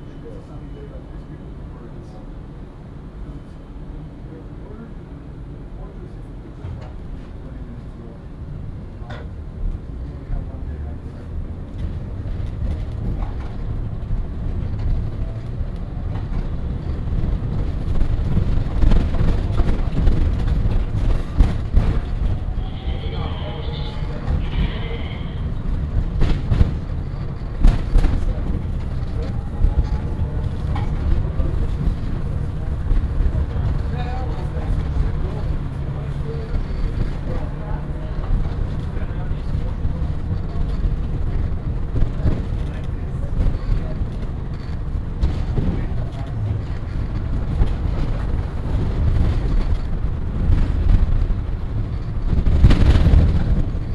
Tram ride, Beograd, Serbia - (332) Shaky tram with surreal announcements
Binaural recording of a really shaky tram ride with unreal speaker announcements
Recorded with Soundman OKM + Iphone7 (with zoom adapter).